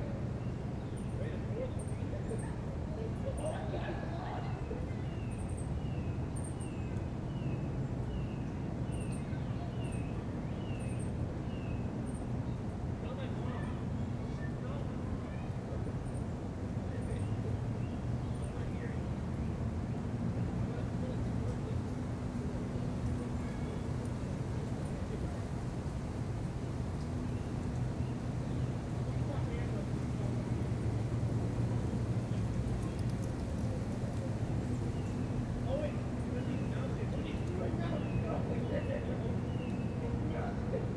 Riverside Park, Roswell Riverwalk Trail, Roswell, GA, USA - Riverside Park - A Bench By The River
A recording taken from a small wooden platform with a bench that overlooks the Chattahoochee. The water is so still that it's completely inaudible. Traffic from the nearby road is heard, as is the human activity emanating from riverside park. Some wildlife also made it into the recording, including a cardinal that nearly clipped my preamps with its chirp.
[Tascam Dr-100mkiii w/ Primo EM-272 omni mics, 120hz low cut engaged]